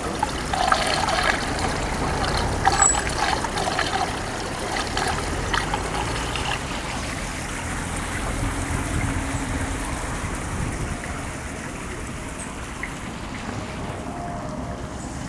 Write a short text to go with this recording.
water droppings by the fountain of the main square